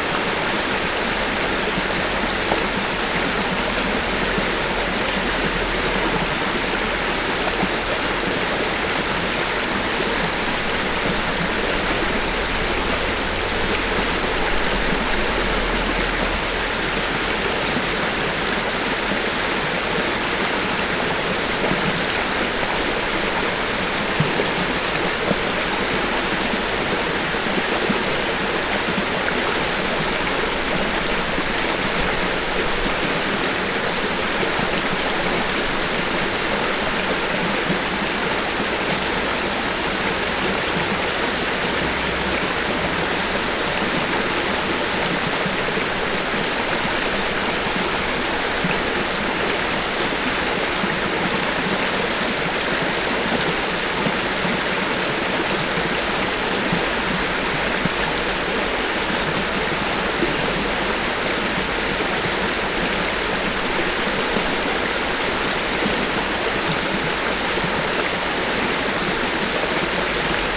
Afon Glaslyn - River passing over rocks with birdsong
The river Afon Glaslyn as it feeds off lake Lyn Dinas. Recorded on a clear day with little wind
Cymru / Wales, United Kingdom, 24 June 2021